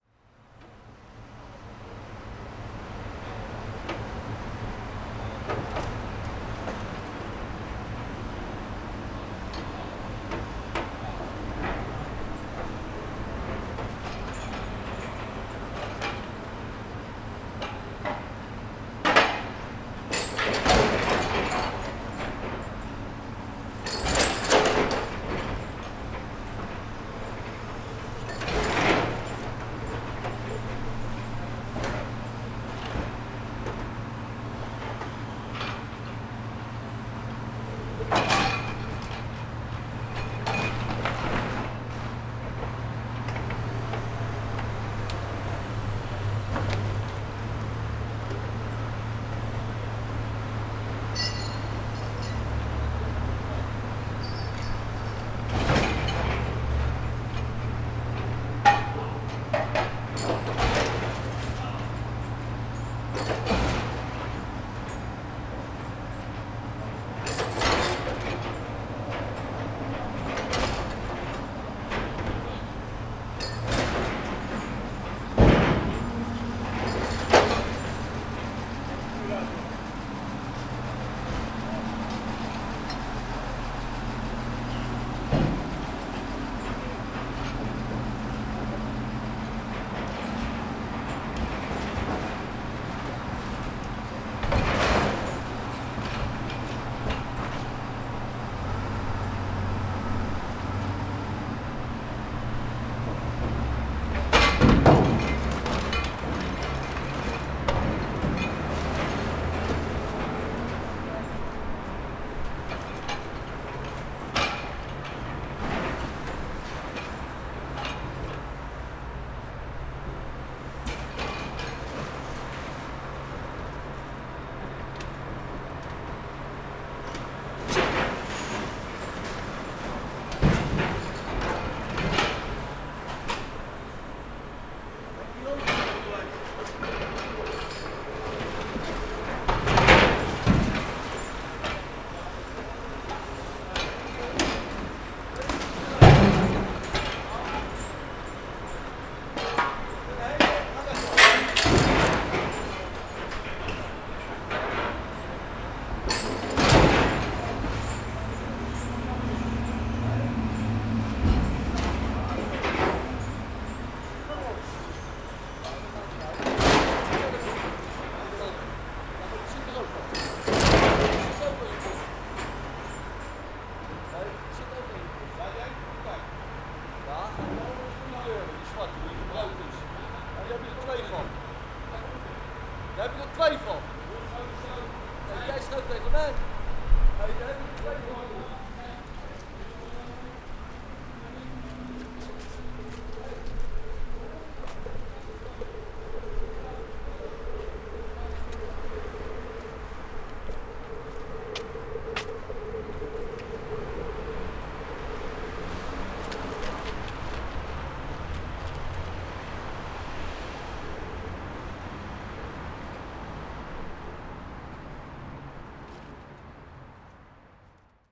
Rivierenbuurt-Zuid, The Hague, The Netherlands - under-the-bridge
Press cars, truck to carry away and leaves ...
20 November, ~11:00